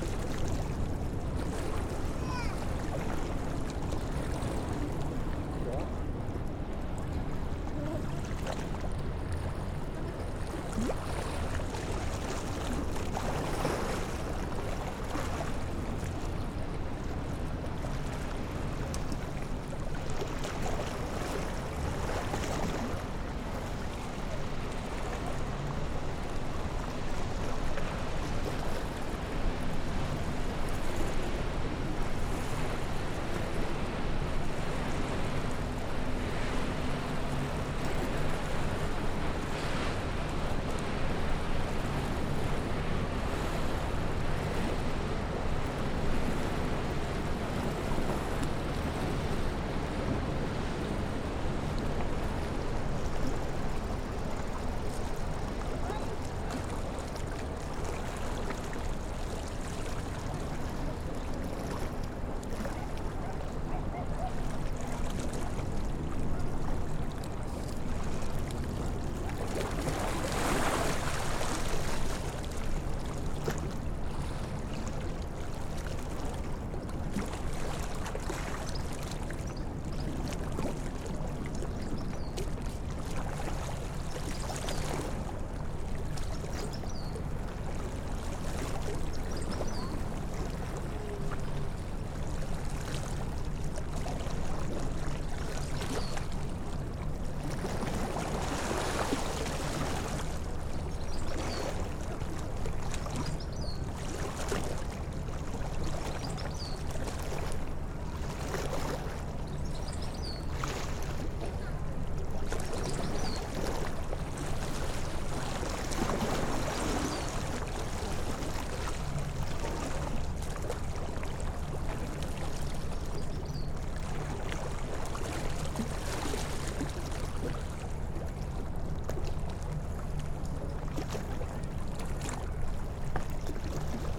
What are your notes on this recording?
Long recording of the sound of the River Thames with river traffic and aircraft sounds. Recorded from Island Gardens on the Isle of Dogs 3.06.18. on Zoom H5 with external shotgun microphone by Jamie McCarthy